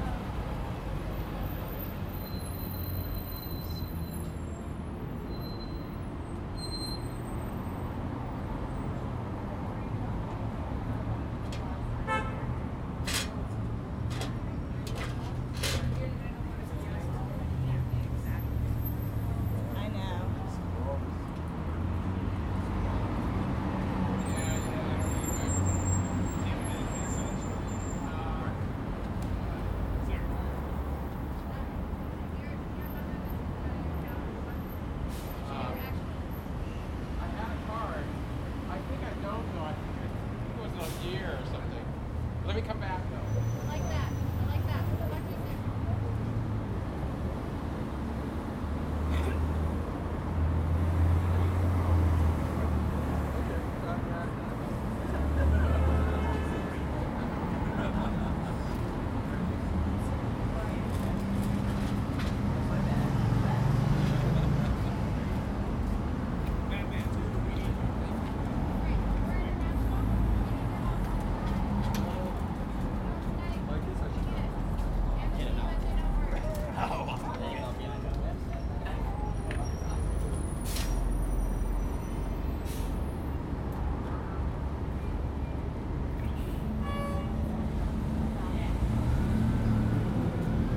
Norma Triangle, West Hollywood, Kalifornien, USA - West Hollywood Street Cafe
Santa Monica Boulevard, West Hollywood, Street Cafe around noon; Zoom Recorder H2N